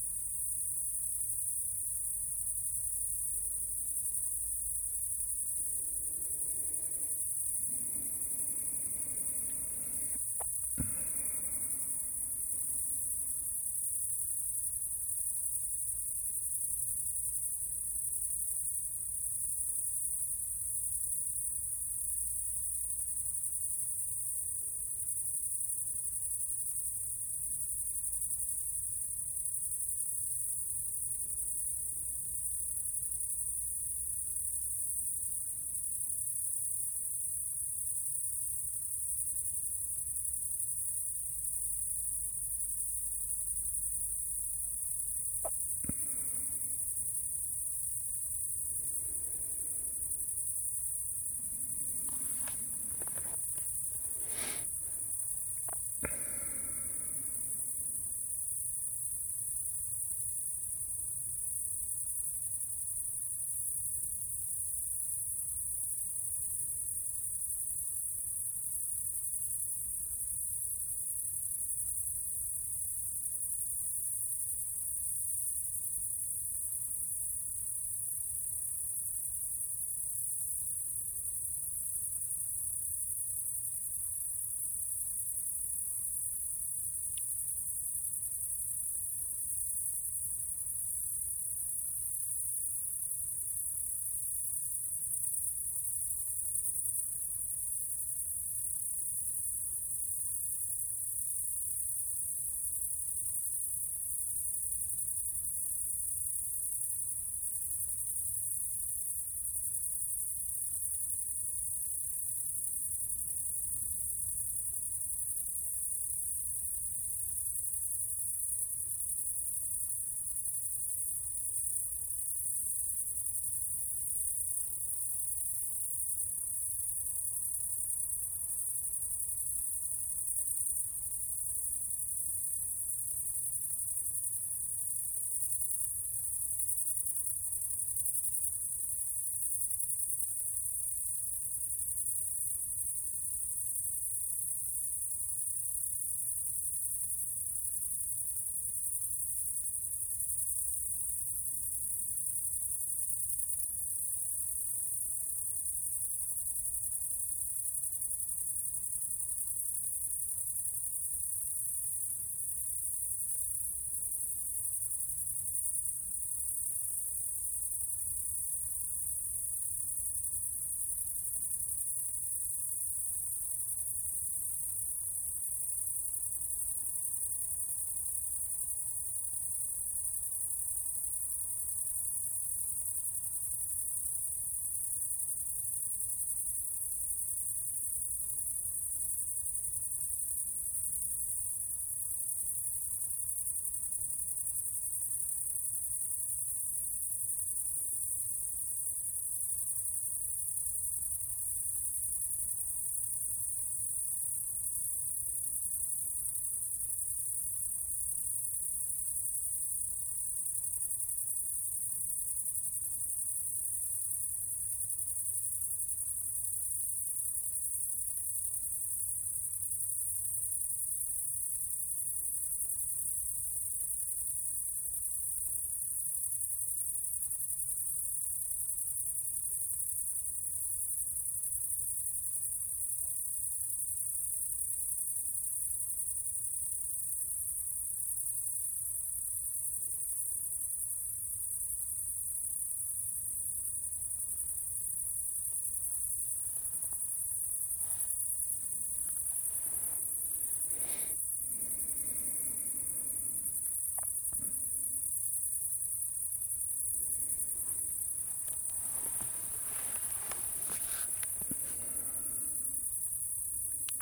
In my garden, listening to... you !
Zoom H4n + Soundman OKM II classic